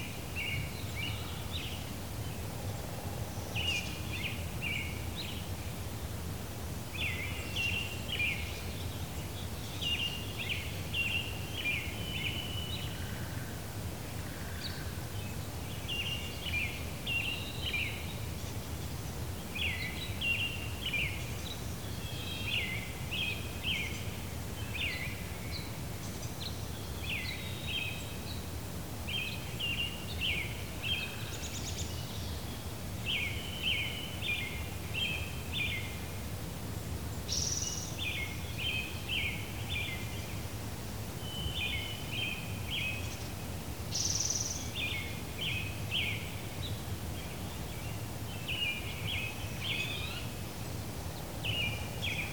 Sherwood Forest - Quiet Morning
Some people are night owls, some are early birds -- it's genetic; you can't help what you are. I find the early morning wonderfully fresh and inviting, with the trees full of life in the morning sun -- and very little else moving.
Major elements:
* Birds (crows, starlings, chickadees, seagulls, finches, an owl, a woodpecker, and several others I can't identify)
* Cars and trucks
* Airplanes (jet and prop)
* Dogs
* A rainshower ends the recording session